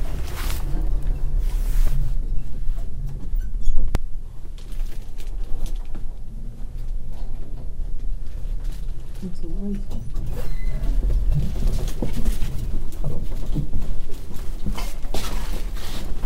Empire Riverside Hotel
Aus der Serie "Immobilien & Verbrechen". Gedämpfte Atmosphäre im Luxushotel: der diskrete Sound der Bourgeoisie.
Keywords: Gentrifizierung, St. Pauli, Brauereiquartier